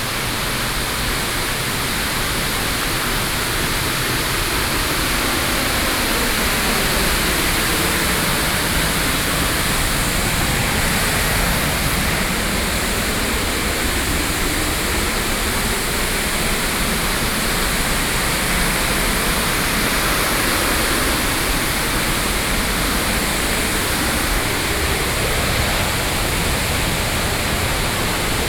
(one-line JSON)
{"title": "Shifen waterfall, Pingxi District - Waterfall", "date": "2012-11-13 14:27:00", "latitude": "25.05", "longitude": "121.79", "altitude": "185", "timezone": "Asia/Taipei"}